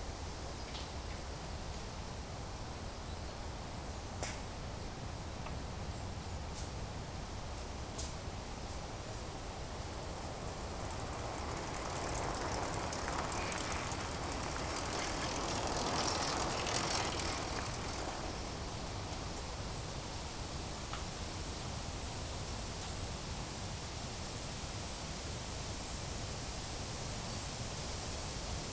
berlin wall of sound-east of flausszinsee. j.dickens160909